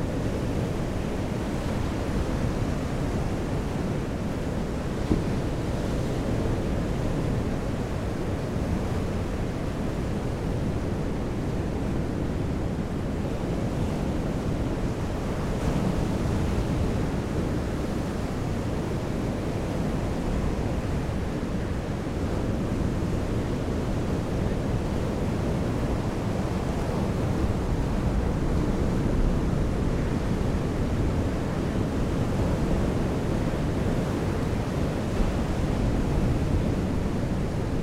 Benicasim, Castellón, España - Voramar
Voramar, Rode nt-5 (Omni) + Mixpre + Tascam Dr-680, With Jercklin "Disk" DIY